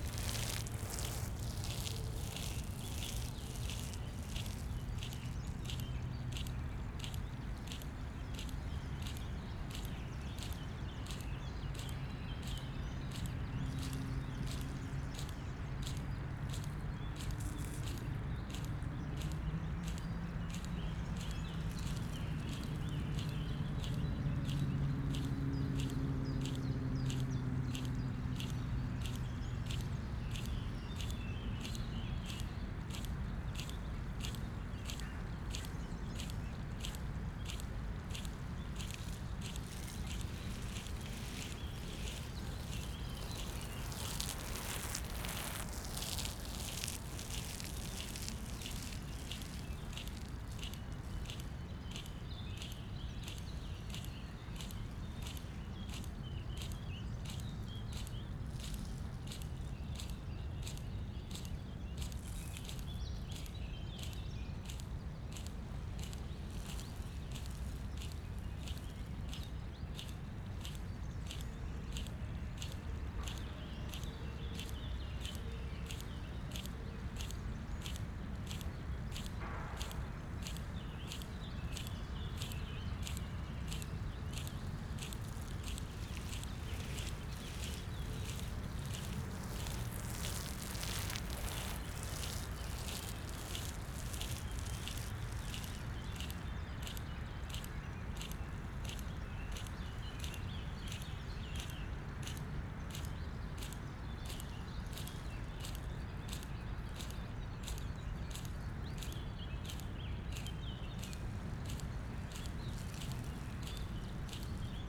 Friedhof Columbiadamm, Berlin - irrigation system
Friedhof Columbiadamm cemetery, irrigation system, distant morming rush hour traffic noise
(SD702, S502 ORTF)
Berlin, Germany